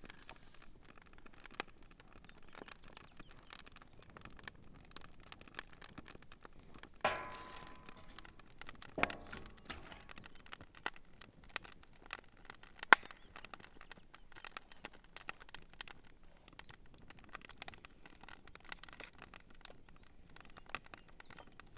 Buryanek State Recreation Area - Camp Fire
Recording of a camp fire at the campground in the Burynanek State Recreation area. A log is added to the fire about half way through the recording